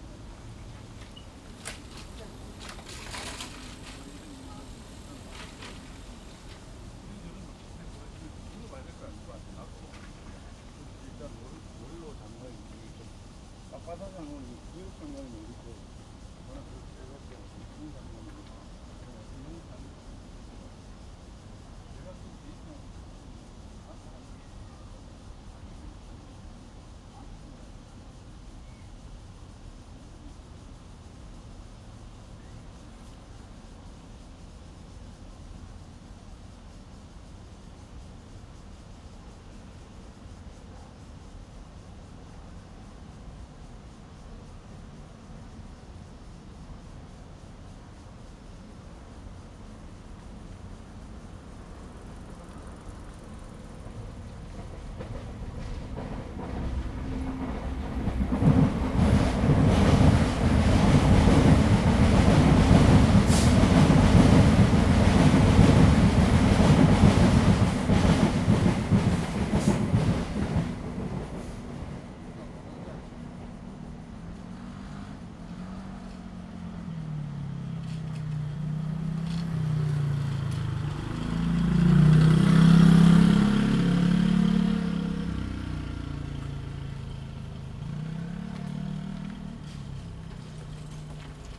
淡水區, New Taipei City, Taiwan - in the woods
motorcycle, Traffic Sound, MRT trains through, Bicycle sound, In the next MRT track
Zoom H2n MS+XY +Spatial Audio